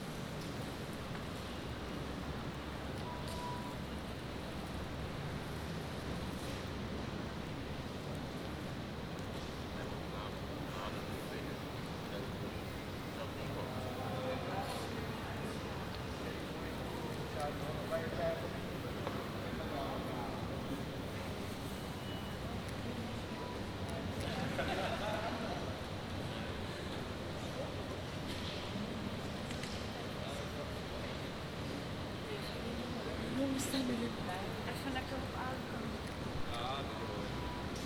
Station Hollands Spoor, Den Haag, Nederland - Station Hollands Spoor
Binaural recording made on a platform at Train station Hollands Spoor, The Hague.
The Hague, The Netherlands